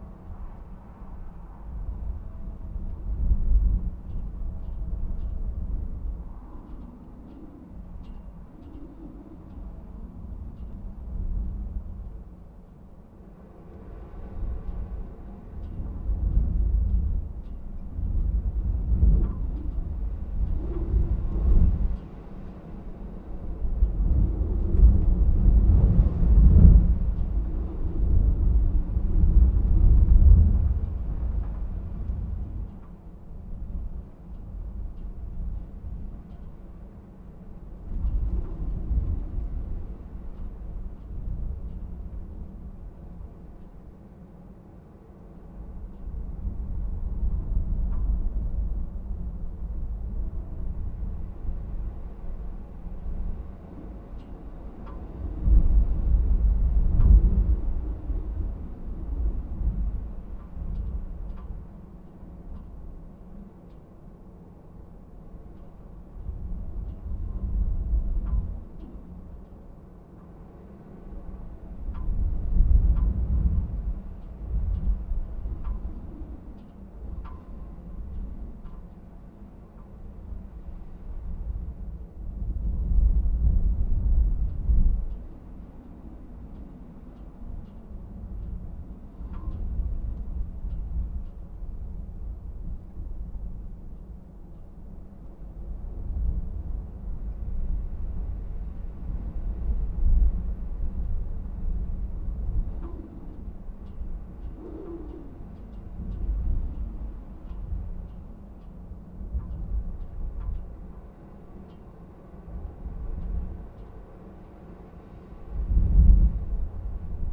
Trégastel, France - Wind From Inside a chimney vent
Des vent violent entendus depuis l'intérieur de la cheminée.
Wild wind from inside a chimney vent.
/Oktava mk012 ORTF & SD mixpre & Zoom h4n